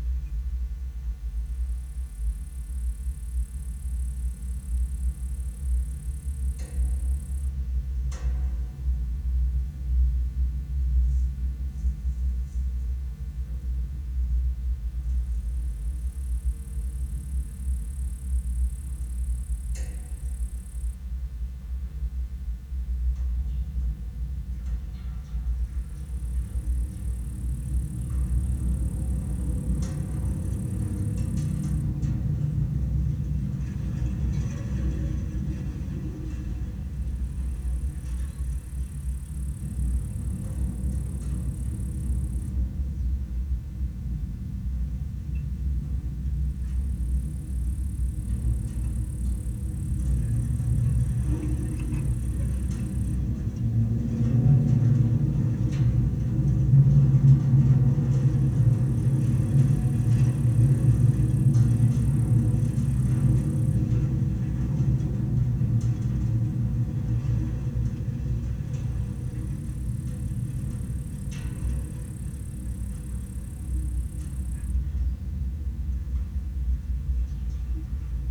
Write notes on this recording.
a wire holding abandoned metallic water tower